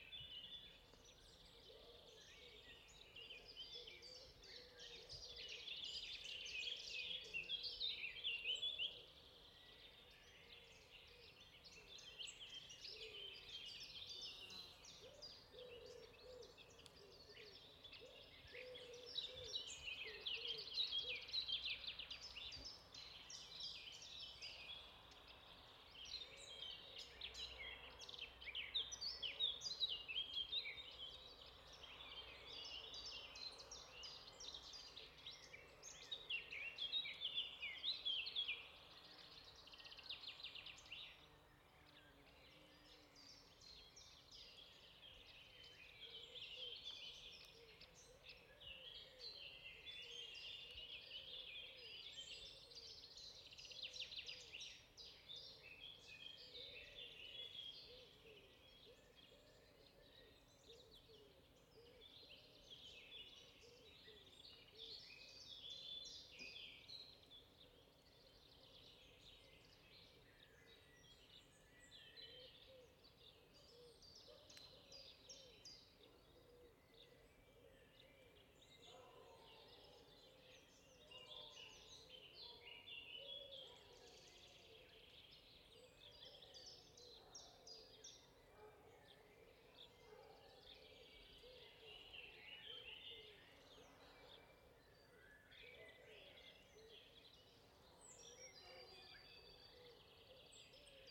Seigy, France - Spring atmosphere
Seigy, clearing wood oriented, late day with many nice birds
by F Fayard - PostProdChahut
Sound Device 633, MS Neuman KM 140-KM120